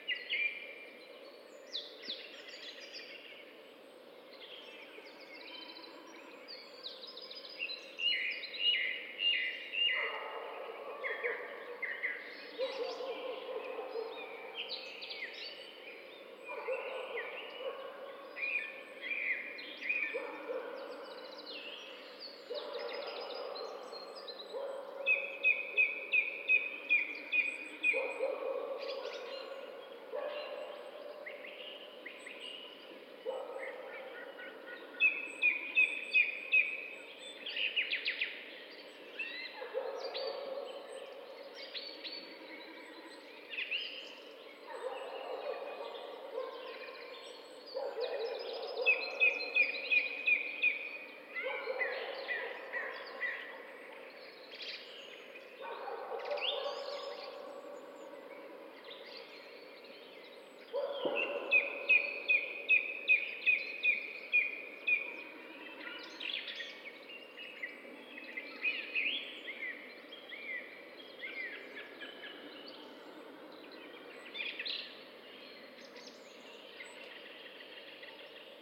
Voverynė, Lithuania, evening soundscape
quarantine evening walk in the wood. birds, distant dogs...